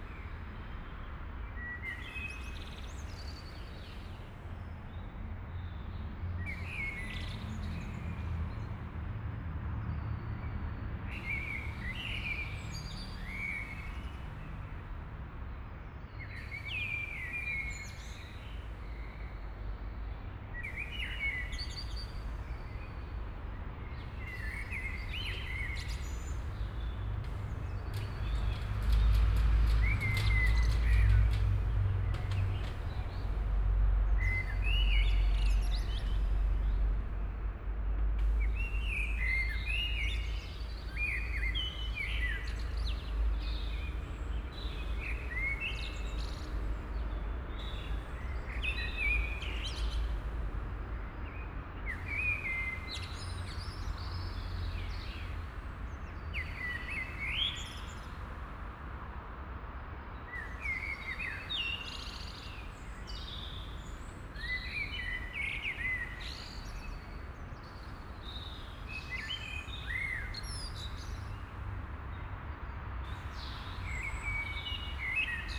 Inside a private garden at 6 a clock in the morning. The sounds of morning bords and the sounds from the street traffic of the nearby A40 highway.
In einem Privatgarten um 6 Uhr morgens. Der Klang der morgendlichen Vogelstimmen und der Klang des Verkehrs der naheliegenden Autobahn A40.
Projekt - Stadtklang//: Hörorte - topographic field recordings and social ambiences